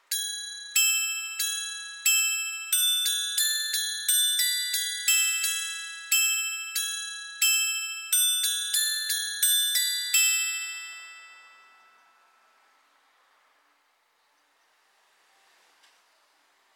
Pl. des Héros, Brebières, France - Brebières - carillon de l'hôtel de ville
Brebières (Pas-de-Calais)
Carillon de l'hôtel de ville (en extérieur sur la façade)
Suite de ritournelles automatisées.
Hauts-de-France, France métropolitaine, France, 8 June 2020, 10:00